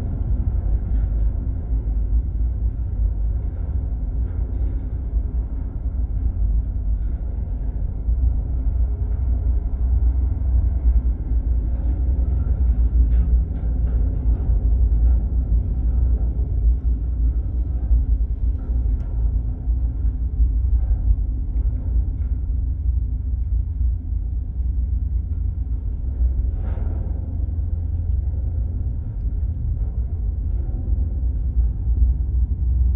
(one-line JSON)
{"title": "Utena, Lithuania, abandoned hangar", "date": "2019-07-30 15:50:00", "description": "amall local aeroport. abandoned hangar. contact microphones on the massive doors. low frequencies!", "latitude": "55.49", "longitude": "25.72", "altitude": "187", "timezone": "Europe/Vilnius"}